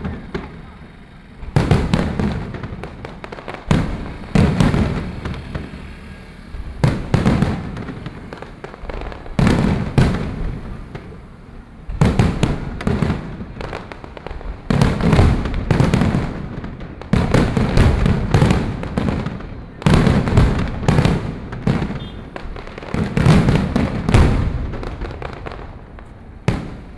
Distance came the sound of fireworks, Traffic Sound
Please turn up the volume a little. Binaural recordings, Sony PCM D100+ Soundman OKM II